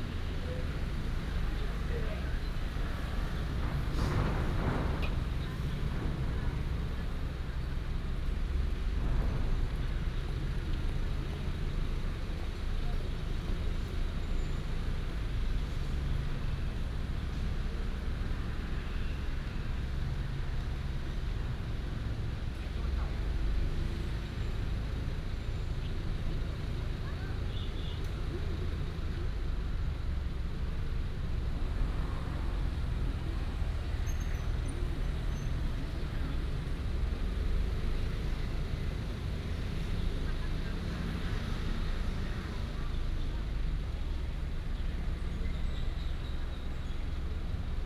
仁祥公園, Zhongli Dist., Taoyuan City - in the Park
in the Park, Traffic sound, Binaural recordings, Sony PCM D100+ Soundman OKM II
Zhongli District, Taoyuan City, Taiwan, 29 November 2017